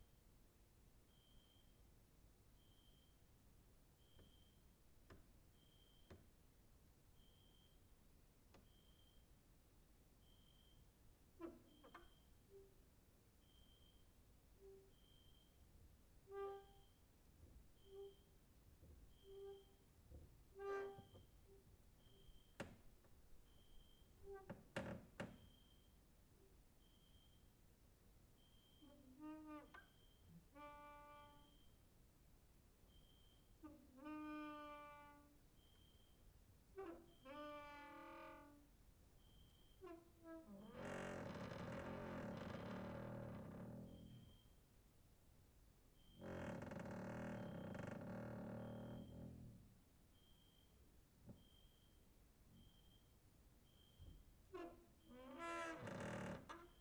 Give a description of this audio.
cricket outside, exercising creaking with wooden doors inside